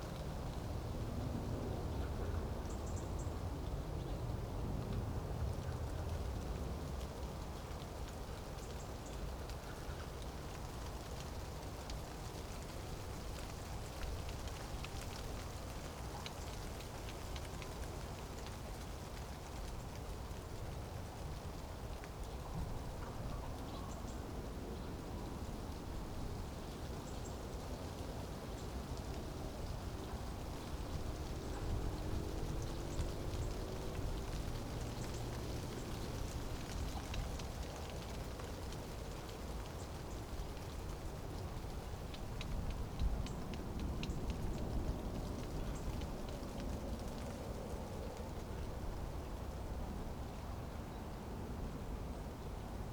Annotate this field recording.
European aspen, Espe, Zitterpappel, Populus tremula shaking in light wind, at the edge of a former disposal site. west german garbage was dropped on this east german landfill. (Sony PCM D50)